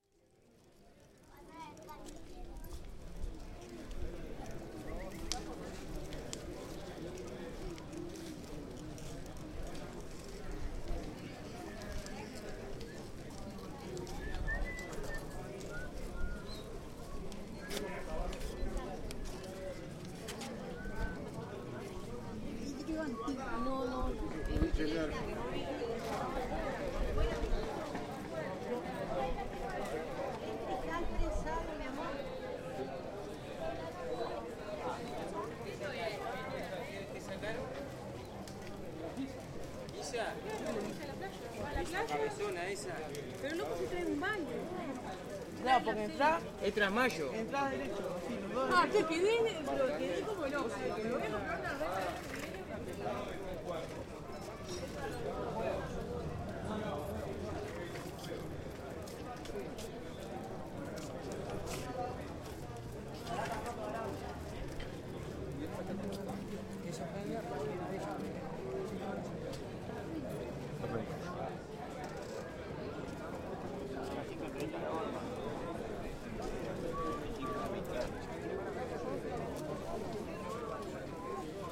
The market is getting crowded and busy. You can buy vegetables, fruits and meet.